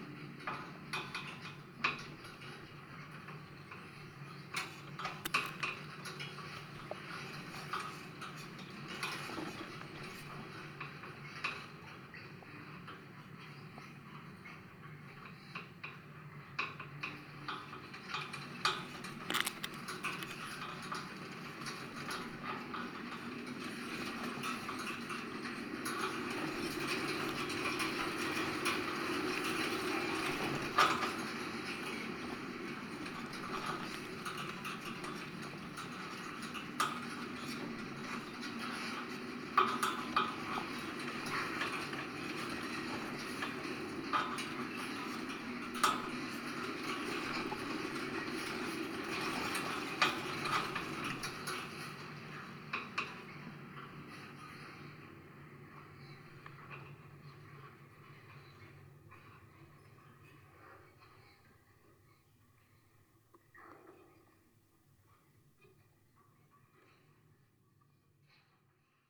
Lithuania, Vyzuonos, metallic fence
metallic fence recorded with contact mics